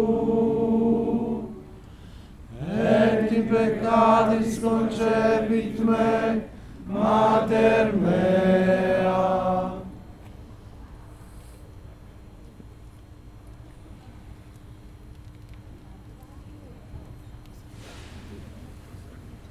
Sant Agnello, Italie - Red procession of Easter
For Easter (Pasqua in italian), in the little village of Sant' Agnello, near Sorrento, women and men wear red costumes for the first procession in the night, at midnight. They go, singing, from a church to an other church of the village.